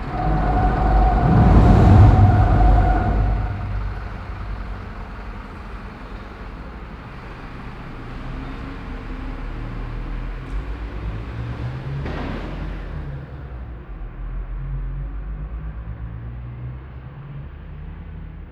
Schönebeck, Essen, Deutschland - essen, heissener str, train bridge
Unter einer Eisenbahnbrücke. Der Klang von vorbeifahrenden Fahrzeugen und Fahrradfahrern auf der Straße und darüber hinweg fahrenden Zügen.
Under a railway bridge. The sound of passing by street traffic and the sound of the trains passing the bridge.
Projekt - Stadtklang//: Hörorte - topographic field recordings and social ambiences
Essen, Germany, 14 May 2014